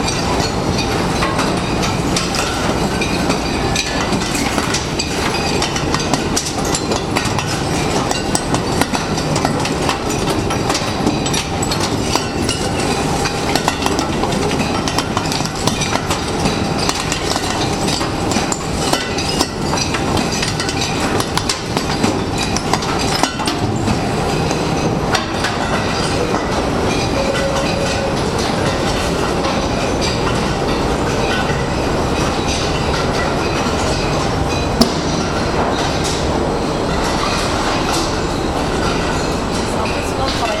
Briqueterie Le Croc, Les Rairies, France - Briqueterie Le Croc - Brick & Ceramic factory
Various noises within the brick factory. The tinkling sound is caused by broken bricks falling off a conveyer belt.